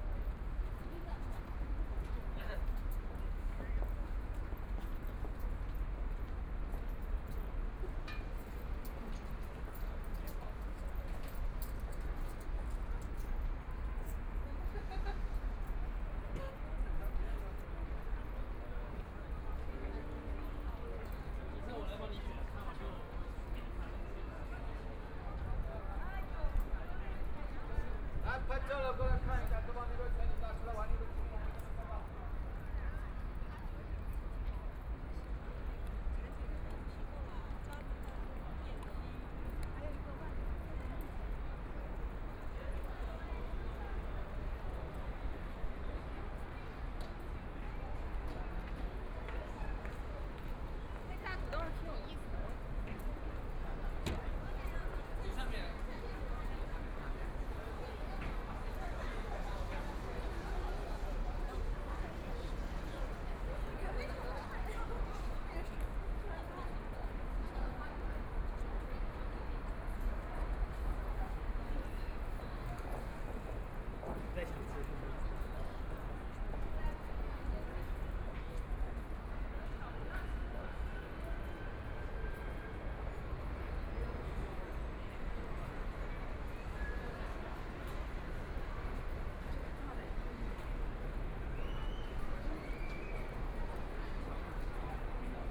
Lujiazui, Pudong New Area - Walking on the bridge
Below the vehicles on the road, Most travelers to and from the crowd, Binaural recording, Zoom H6+ Soundman OKM II